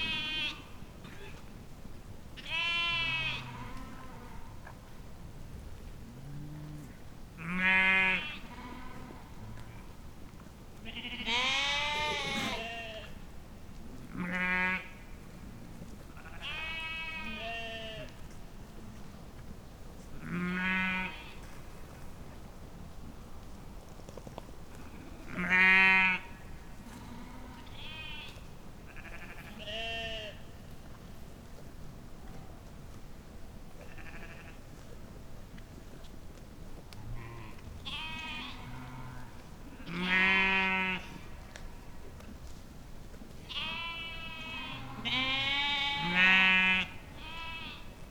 Beselich, Niedertiefenbach - sheep-run at night
meadow with sheep near forest, night ambience
(Sony PCM D50)
Germany, July 2012